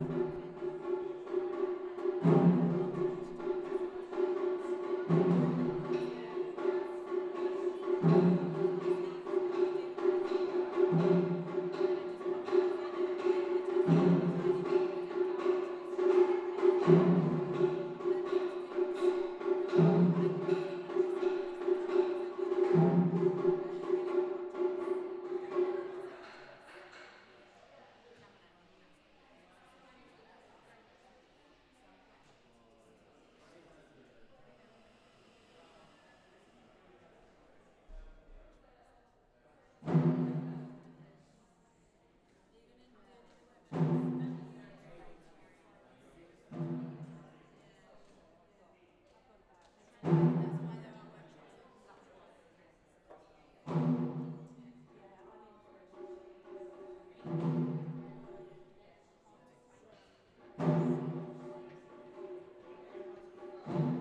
Falmouth University, Penryn Campus, Treliever Road, Penryn, Cornwall, UK - Taiko Demonstration
There was a man demonstrating Taiko drumming in the Performance Centre (Falmouth University). Recorded from 2 floors down through the building.